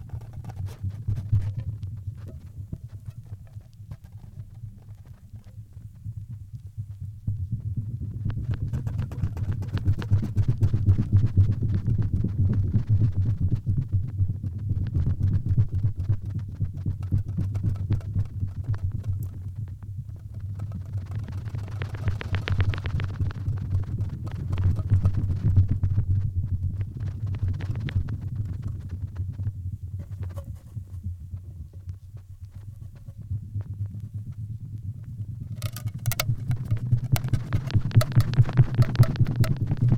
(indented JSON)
{
  "title": "local guy shows old reel tape in the wind",
  "date": "2009-07-08 12:35:00",
  "description": "old reel tape used for scaring birds away from strawberry patch",
  "latitude": "58.71",
  "longitude": "27.13",
  "altitude": "33",
  "timezone": "Europe/Tallinn"
}